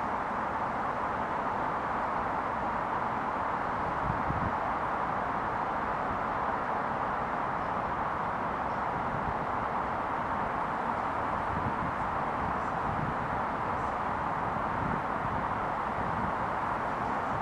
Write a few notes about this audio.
Neskuchny sad. I recorded what was happening around me. Mostly you can hear the sound of passing cars. The evening of January 27, 2020. The sound was recorded on a voice recorder.